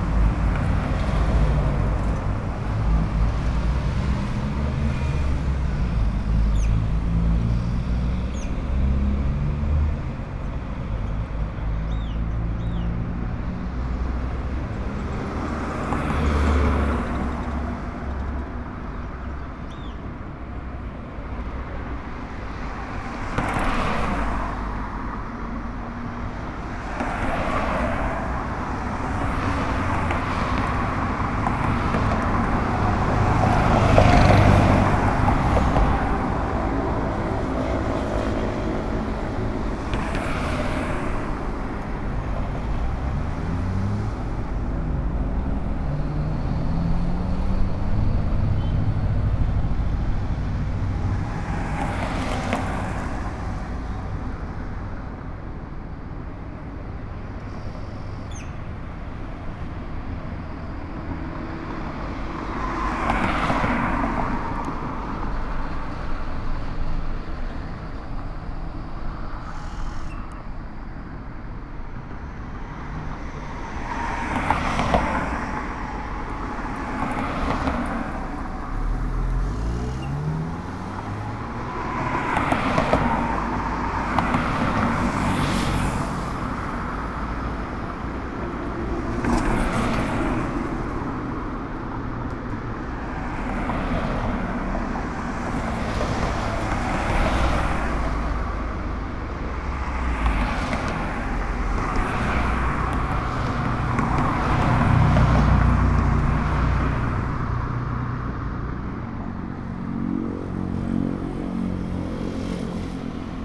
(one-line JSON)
{"title": "Blvd. Mariano Escobedo Ote., León Moderno, León, Gto., Mexico - Tráfico en Mariano Escobedo en el primer día de fase 3 de COVID-19.", "date": "2020-04-21 14:07:00", "description": "Traffic in Mariano Escobedo on the first day of phase 3 of COVID-19.\nThis is a boulevard with a lot of traffic. Although there are several vehicles passing in this quarantine, the difference in vehicle flow is very noticeable.\n(I stopped to record while going for some medicine.)\nI made this recording on April 21st, 2020, at 2:07 p.m.\nI used a Tascam DR-05X with its built-in microphones and a Tascam WS-11 windshield.\nOriginal Recording:\nType: Stereo\nEste es un bulevar con mucho tráfico. Aunque sí hay varios vehículos pasando en esta cuarentena, sí se nota mucho la diferencia de flujo vehicular.\n(Me detuve a grabar al ir por unas medicinas.)\nEsta grabación la hice el 21 de abril 2020 a las 14:07 horas.", "latitude": "21.11", "longitude": "-101.67", "altitude": "1794", "timezone": "America/Mexico_City"}